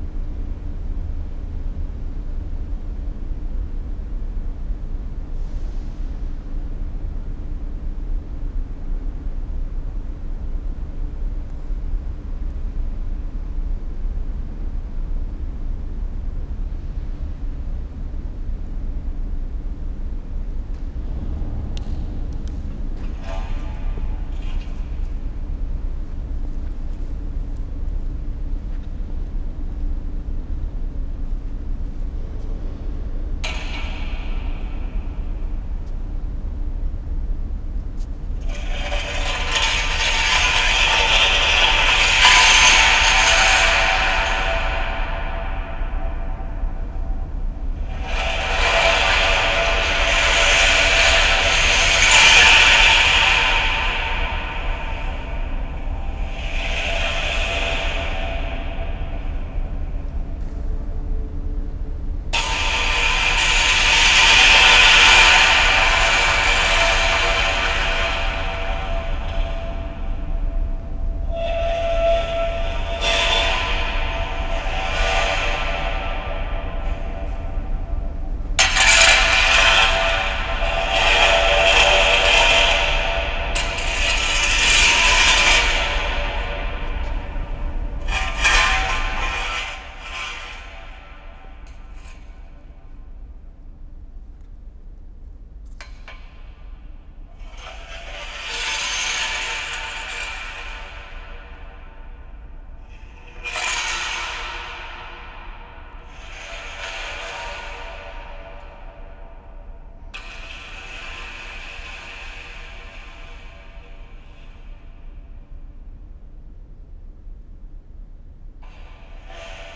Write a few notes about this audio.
scraping floor of warehouse with long metal beam. ST250 mic, Dat recorder